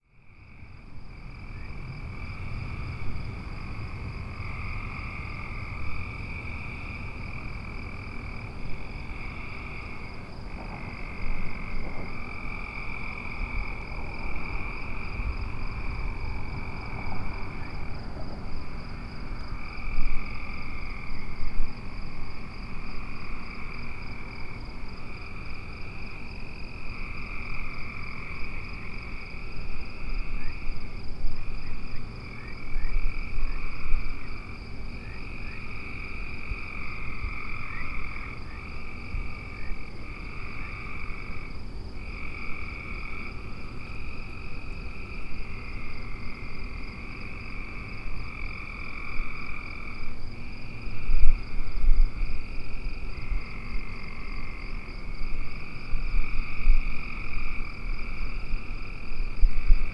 Freedom, MD, USA - The Sunken Hum Broadcast 150 - Watching Lightning Bugs and Listening to Crickets - 30 May 2013
The sounds on the backproch of my brother's house in Maryland.
Maryland, United States of America, 29 May, 22:00